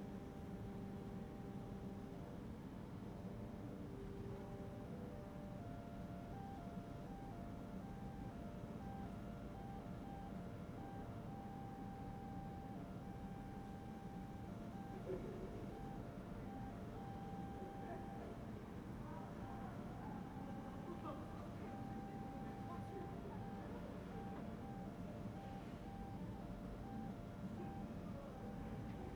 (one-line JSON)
{"title": "Ascolto il tuo cuore, città. I listen to your heart, city. Several chapters **SCROLL DOWN FOR ALL RECORDINGS** - Five p.m. terrace with RadioTre and Burn-Ya in the time of COVID19: soundscape.", "date": "2021-02-20 16:18:00", "description": "\"Five p.m. terrace with RadioTre and Burn-Ya in the time of COVID19\": soundscape.\nChapter CLVIII of Ascolto il tuo cuore, città. I listen to your heart, city\nSaturday, February 20th, 2021. Fixed position on an internal terrace at San Salvario district Turin; Burn-Ya (music instrument) and old transistor radio broadcast RAI RadioTre are in the background. More than three months and a half of new restrictive disposition due to the epidemic of COVID19.\nStart at 4:18: p.m. end at 5: p.m. duration of recording ’”", "latitude": "45.06", "longitude": "7.69", "altitude": "245", "timezone": "Europe/Rome"}